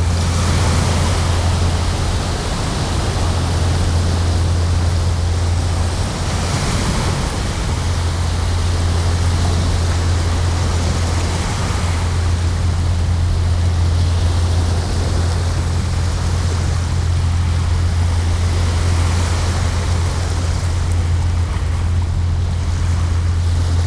{
  "title": "Greenwich, UK - Between Greenwich Power Plant & the Thames",
  "date": "2017-01-10 17:10:00",
  "description": "Recorded with a pair of DPA 4060s and a Marantz PMD661.",
  "latitude": "51.49",
  "longitude": "0.00",
  "altitude": "8",
  "timezone": "GMT+1"
}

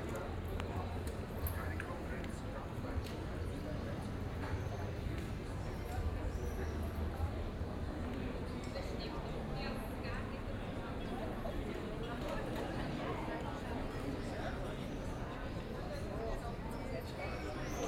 {
  "title": "Aarau, Pelzgasse, evening Schweiz - Pelzgasse",
  "date": "2016-06-28 20:51:00",
  "description": "End of the walk during a quiet evening in Aarau, the bells toll nine o'clock",
  "latitude": "47.39",
  "longitude": "8.04",
  "altitude": "388",
  "timezone": "Europe/Zurich"
}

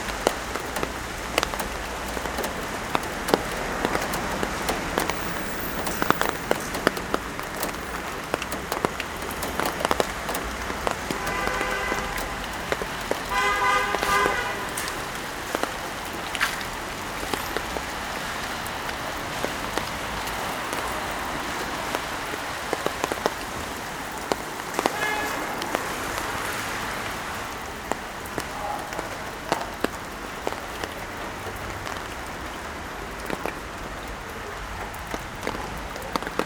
{
  "title": "Milano, Italy - Raindrops in the street",
  "date": "2012-11-10 12:25:00",
  "description": "raindrops falling from the trees on the umbrella and on parked cars, traffic in the rain",
  "latitude": "45.48",
  "longitude": "9.21",
  "altitude": "125",
  "timezone": "Europe/Rome"
}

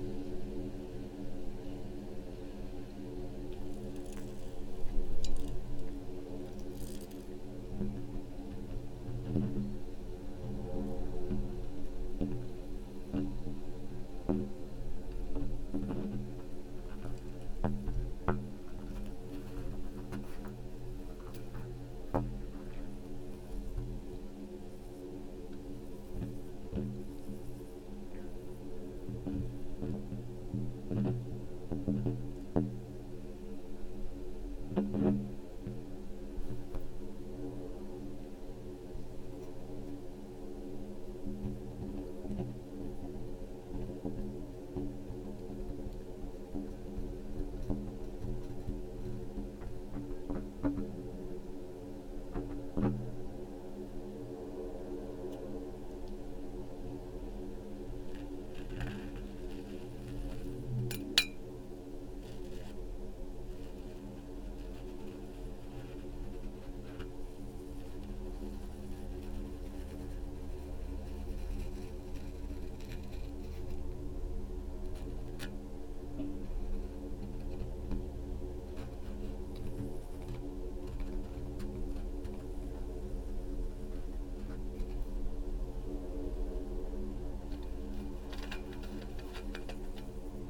{
  "title": "quarry, Marušići, Croatia - void voices - stony chambers of exploitation - borehole",
  "date": "2012-09-10 13:50:00",
  "description": "sand and tiny stones, leaves, few words, breath and voices of a borehole",
  "latitude": "45.42",
  "longitude": "13.74",
  "altitude": "269",
  "timezone": "Europe/Zagreb"
}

